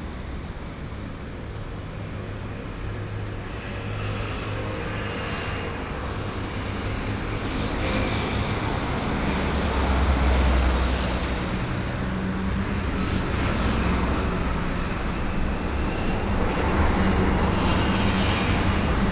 Sec., Jiaoxi Rd., Jiaoxi Township - At the roadside
At the roadside, in front of the Convenience Store, Very hot weather, Traffic Sound